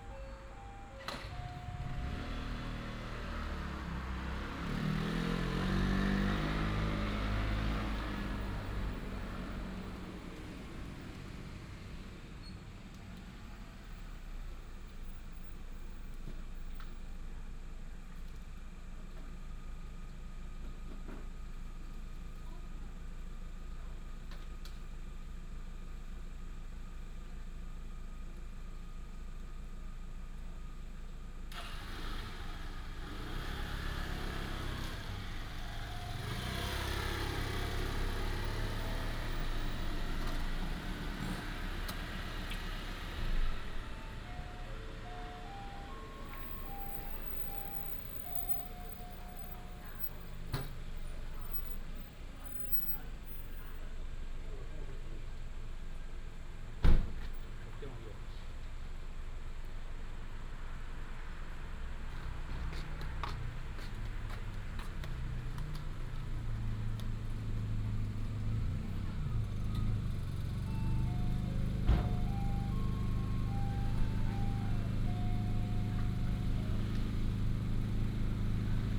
南湖, Dahu Township, Miaoli County - Night highway
Night highway, Outside the convenience store, traffic sound, Insect beeps, Binaural recordings, Sony PCM D100+ Soundman OKM II